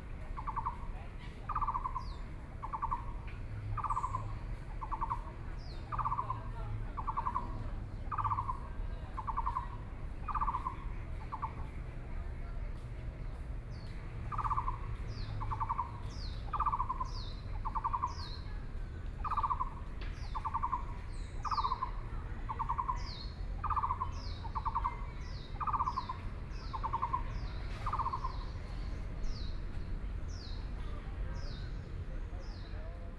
碧湖公園, Taipei City - in the Park
Birdsong, Frogs sound, Aircraft flying through
Taipei City, Taiwan